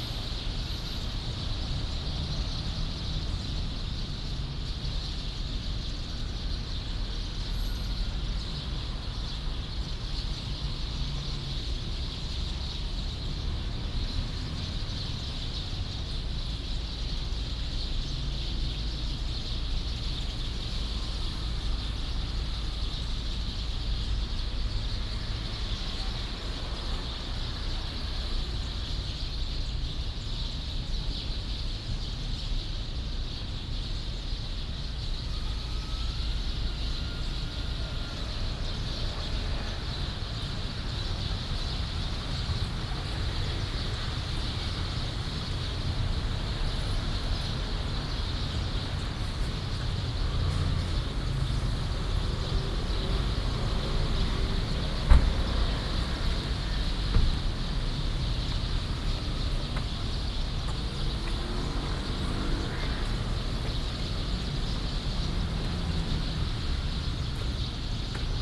雙十人行廣場, Banqiao Dist., New Taipei City - Sparrow
Very many sparrows, Traffic Sound
23 September 2015, ~18:00